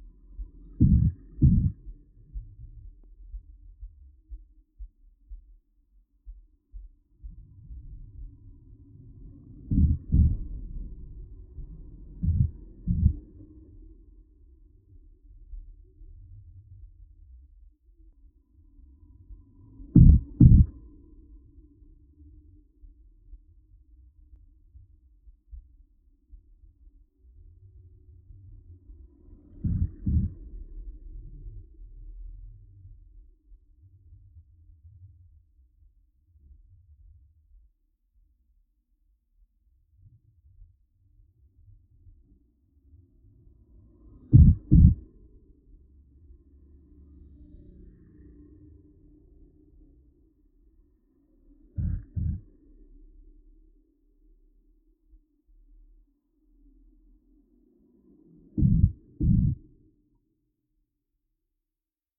Rūdninkų str., Vilnius, Lithuania - Speed bump
Contact microphone recording of a rubber speed bump, commonly called as "laying policeman" in Lithuania. Cars going over it cause a low rhythmic sound. Almost no other sounds can be heard, since rubber is not a very resonant material.
19 July 2019, 16:00